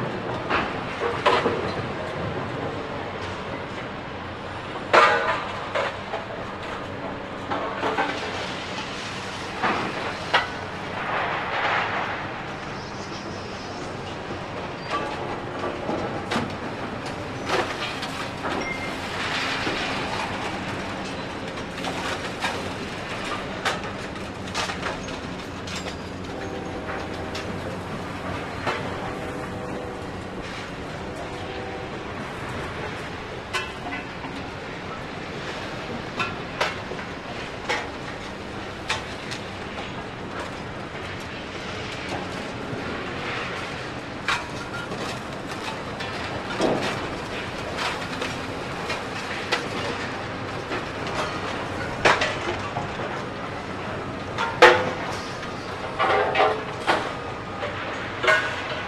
Proler SW scrapyard, Buffalo Bayou, Houston, Texas